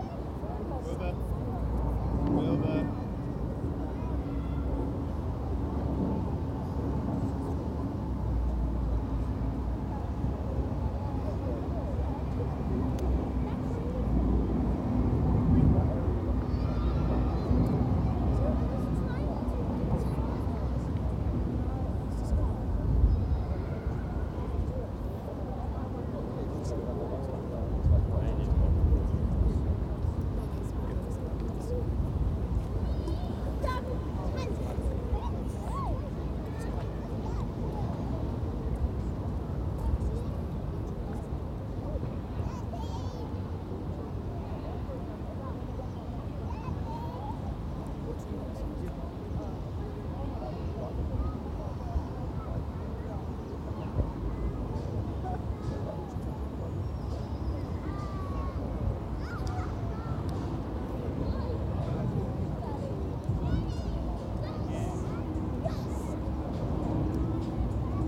Richmond Green - Sunny, windy and noisy day in Richmond
Pretty noisy environment. Sunday in Richmond on Thames, lots of kids, planes, someone playing an electric guitar not far from me... Although I have a decent, long hair DeadCat, the wind still can be heard.
Sony PCM D100 and a little EQ
22 April 2018, ~11:00